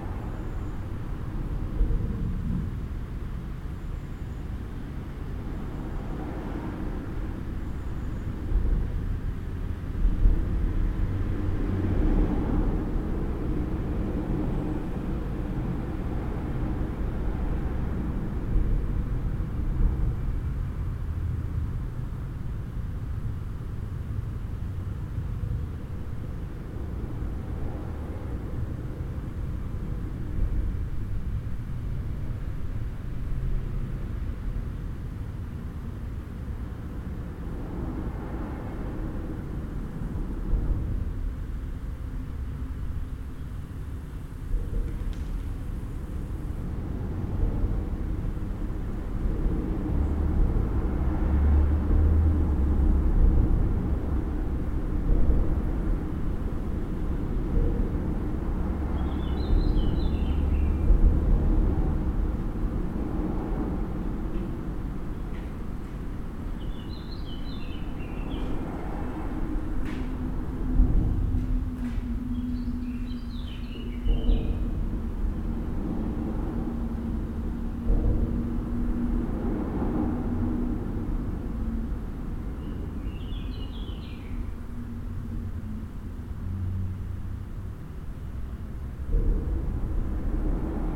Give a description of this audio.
Au bord de la Via Rhôna sous le pont suspendu de Seyssel qui enjambe le Rhône, les bruits rythmés du passage des véhicules, quelques sons de la nature . Zoom H4npro posé verticalement les bruits du vent sur la bonnette reste dans des proportions acceptables et manifestent sa présence.